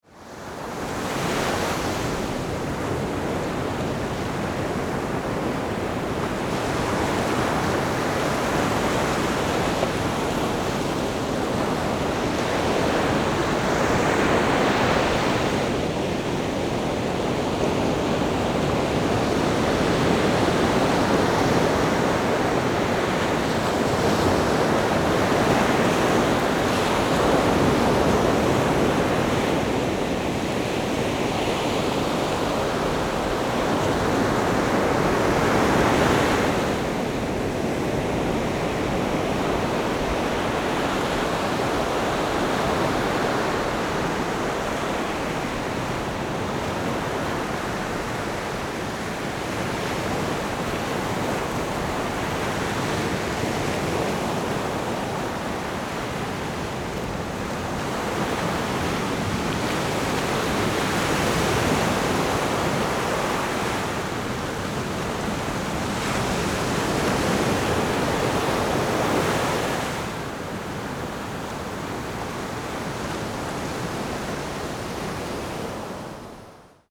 Shimen District, 新北市 - the waves
Big waves, sound of the waves
Zoom H4n+Rode NT4(soundmap 20120711-14 )